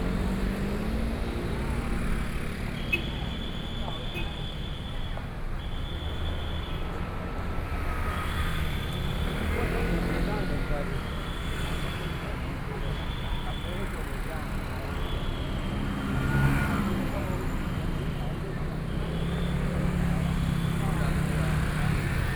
Linsen S. Rd., Taipei City - on the Road
Packed with people on the roads to protest government, Walking through the site in protest, People cheering, Nearby streets are packed with all the people participating in the protest, The number of people participating in protests over fifty
Binaural recordings, Sony PCM D100 + Soundman OKM II